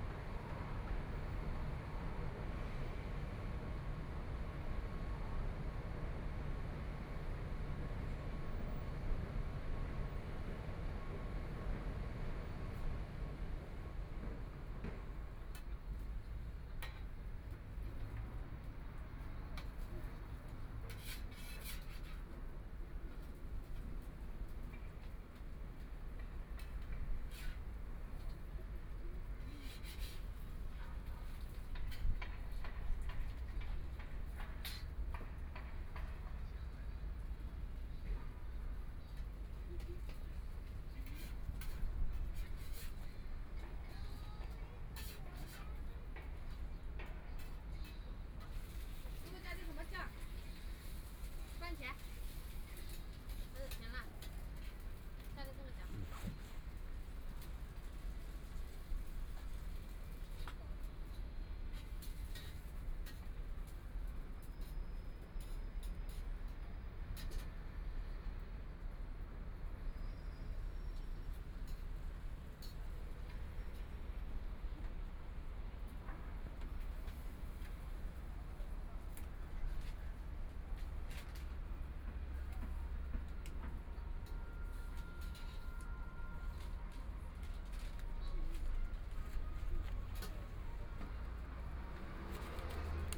Xinjiangwancheng station, Shanghai - In the subway station entrance
In the subway station entrance, Traffic Sound, Beat sound construction site, Binaural recording, Zoom H6+ Soundman OKM II